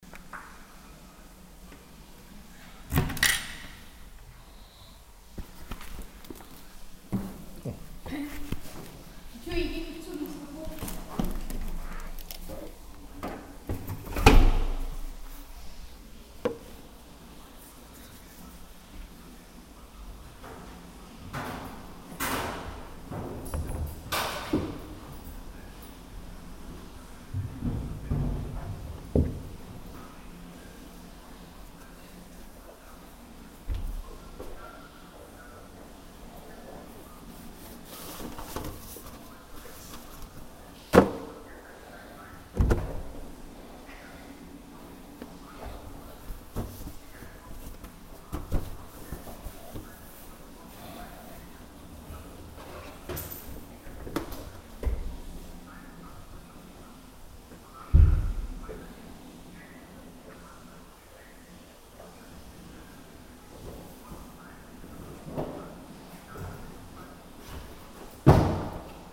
public open air swimming pool - Alf, public open air swimming pool
dressing room, may 31, 2008 - Project: "hasenbrot - a private sound diary"